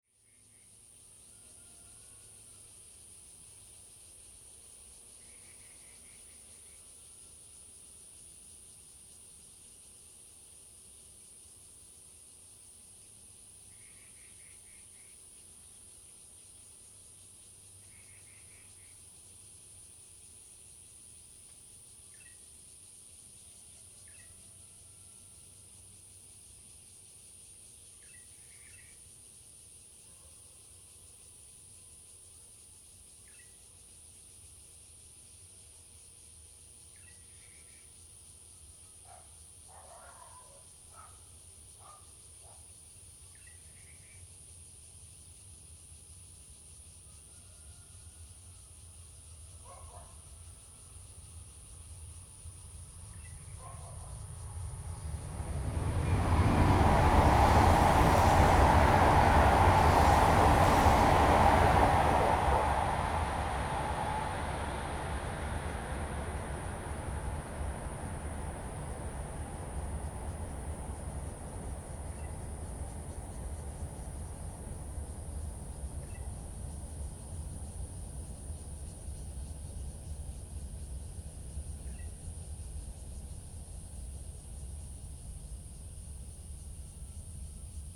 義民路二段380巷57弄, Xinpu Township - High speed railway
Near the tunnel, birds call, Cicadas sound, High speed railway, The train passes through, Zoom H2n MS+XY